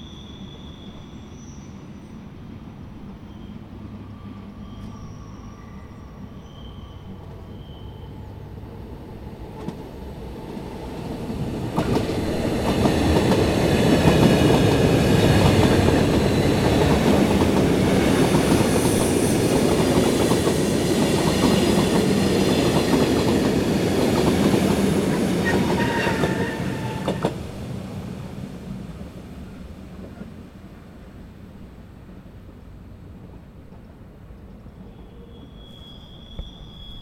Bernina Express entlang Lago die Poschiavo
Bernina Express von St. Moritz bis Tirano It. Rhätische Bahn, Weltkulturerbe